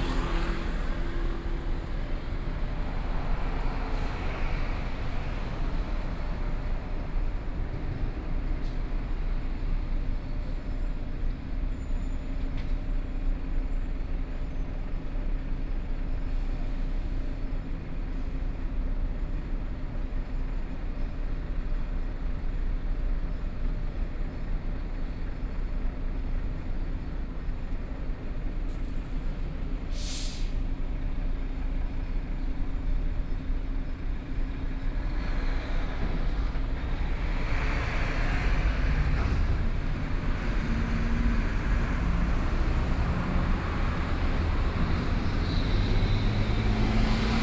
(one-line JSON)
{"title": "Sec., Zhongshan Rd., 蘇澳鎮聖湖里 - Traffic Sound", "date": "2014-07-28 13:19:00", "description": "At the roadside, Traffic Sound, Trains traveling through, Hot weather", "latitude": "24.61", "longitude": "121.83", "altitude": "25", "timezone": "Asia/Taipei"}